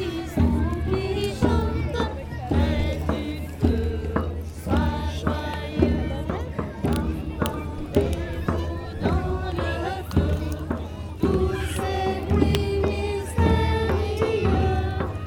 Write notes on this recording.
Into the Steiner school, people are celebrating the Saint John's Eve fire. Extremely important moment in 3:30 mn, very young children jump over the fire, in aim to burn some bad moments or their life, it's a precious gesture of purification.